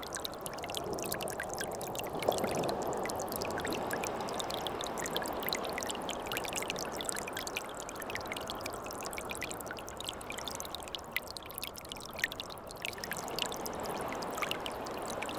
Ault, France - Stream on low tide
During the low tide, theres a lot of small streams, going to the sea. Very quiet ambiance on the Bois de Cise beach.